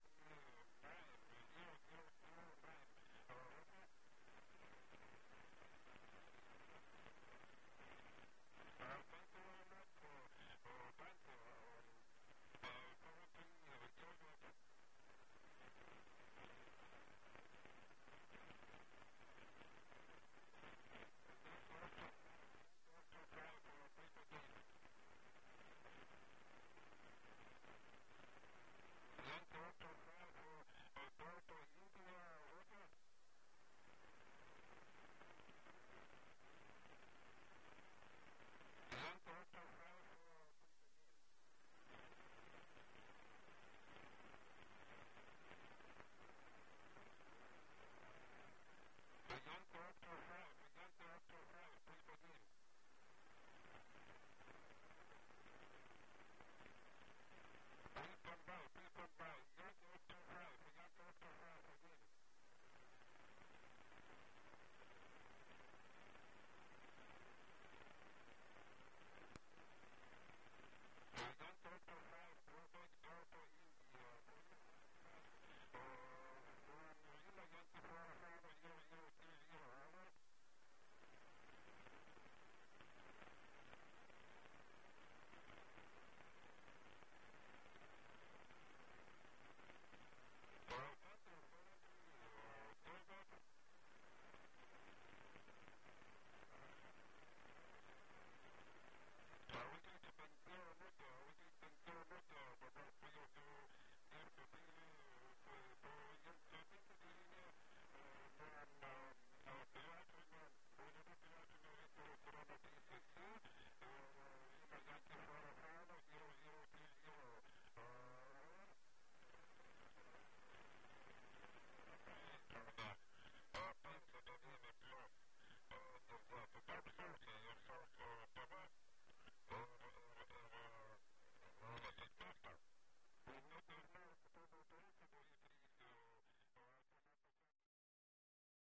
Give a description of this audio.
I was on some artistic residence in Kintai gallery. As always I take some shortwave radio with myself...